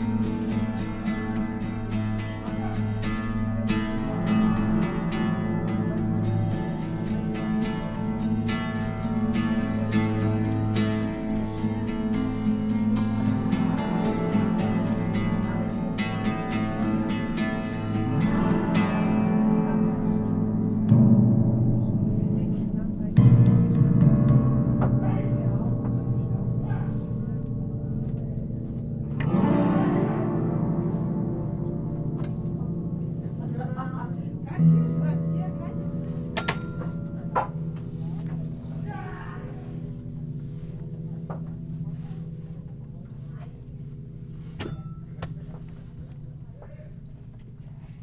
piano strings played with sticks in an open living space constructed by rolf tepel. nearby a martial arts group rehearsing movements
soundmap nrw - social ambiences and topographic field recordings